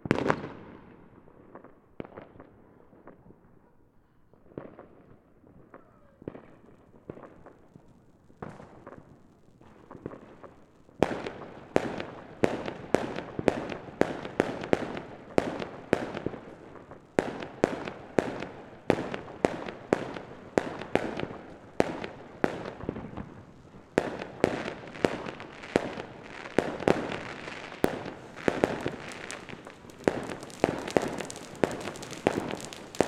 Short recording of fireworks going off around a street corner during new year's eve celebration of 2021. Recorded with ZOOM H5.